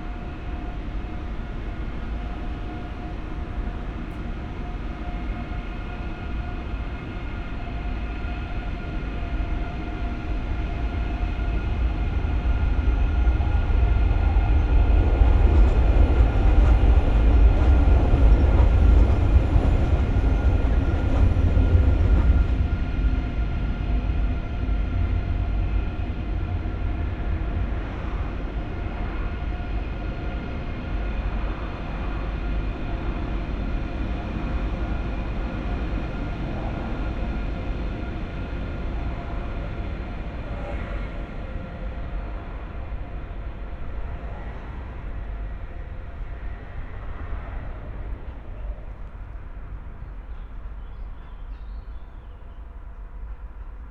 Köln, Deutz, railroad viaduct - train traffic
sounds of trains traffic passing on various layers. entrance to the Deutsche Bahn factory premises.
(tech: Olympus LS5 + Primo EM172 binaural)